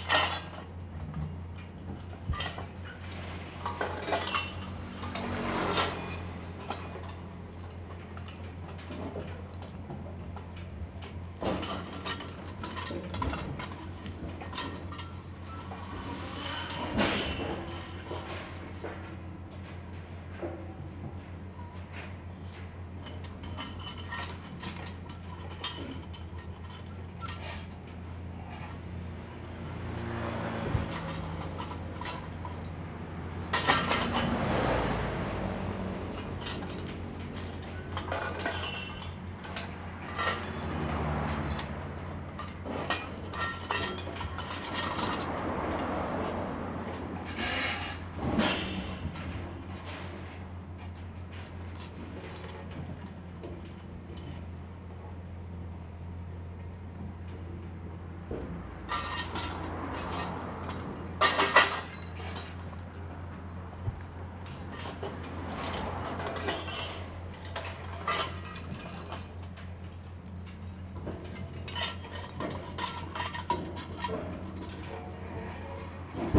多良村, Taimali Township - Road Construction
Road Construction, traffic sound, The weather is very hot
Zoom H2n MS +XY